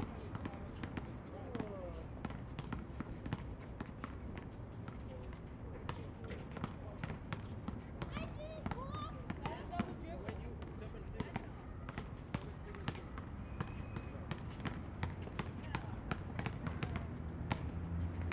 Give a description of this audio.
park opposite of the pacific design centre, north san vicente boulevard, west hollywood, early afternoon; children playing basketball; distant trafic;